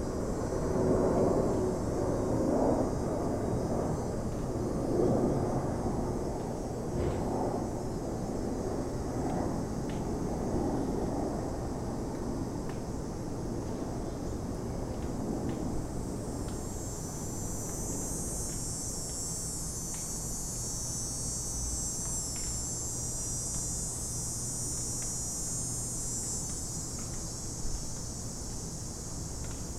Lyndale, Minneapolis, MN, USA - cicada arriving flights minneapolis
cicada arriving flights summer Minneapolis 20190902
Minnesota, USA, 2 September